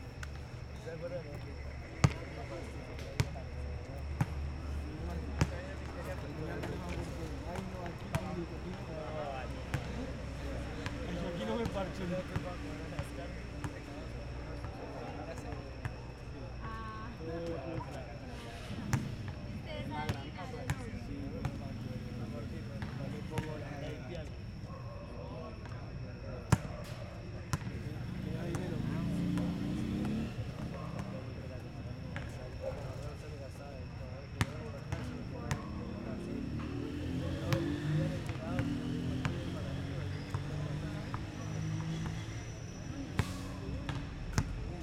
Toma de audio / paisaje sonoro de la cancha de baloncesto de Los Alpes realizada con la grabadora Zoom H6 y el micrófono XY a 120° de apertura a las 8:40 pm aproximadamente. Cantidad media de personas al momento de la grabación, se puede apreciar el sonido de las personas hablando en las graderías, el pasar de unas motocicletas y el sonido de un balón de baloncesto rebotando a pocos metros de la grabadora.
Sonido tónico: Personas hablando.
Señal sonora: Motocicleta pasando.
5 September, ~21:00